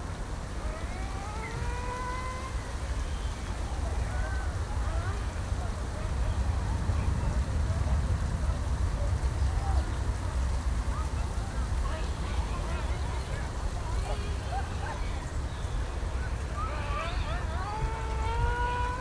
Tempelhofer Feld, Berlin, Deutschland - wind, field ambience
place revisited while quite some activity of people is audible
(Sony PCM D50, DPA4060)
Berlin, Germany, 8 November 2014